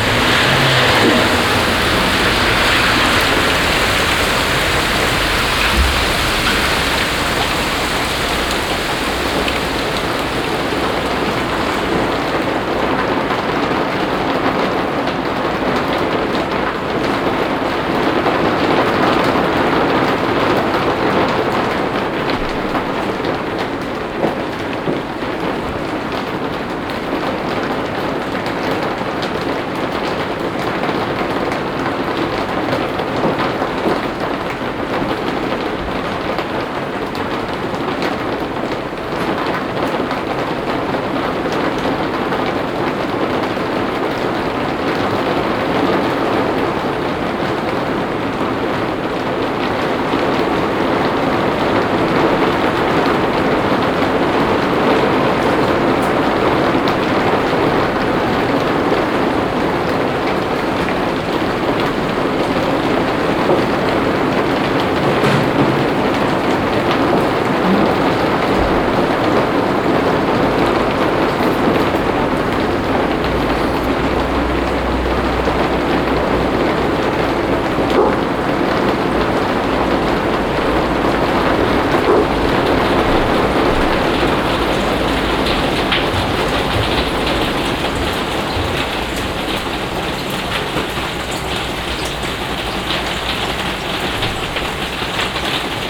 Bickendorf, Köln, Deutschland - cologne, backyard factory hall, april rain
Inside a factory hall. The sound of different states of rain pouring on the roof top. Some high heel boots steps on the concrete floor.
soundmap d - social ambiences and topographic field recordings